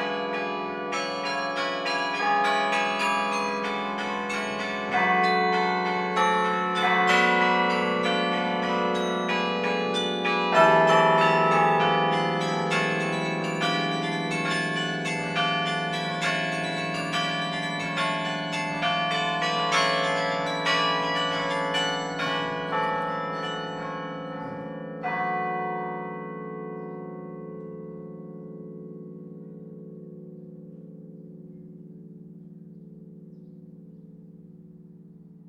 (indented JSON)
{
  "title": "Lieu-dit Les Pres Du Roy, Le Quesnoy, France - Le Quesnoy - Carillon",
  "date": "2020-06-14 10:00:00",
  "description": "Le Quesnoy - Carillon\nMaitre Carillonneur : Mr Charles Dairay",
  "latitude": "50.25",
  "longitude": "3.64",
  "altitude": "132",
  "timezone": "Europe/Paris"
}